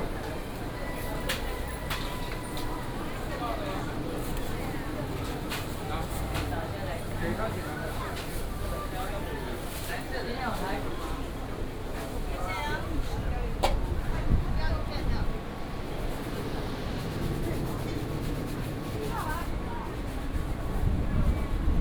成功市場, Da’an Dist., Taipei City - Walking in the market
Walking in the market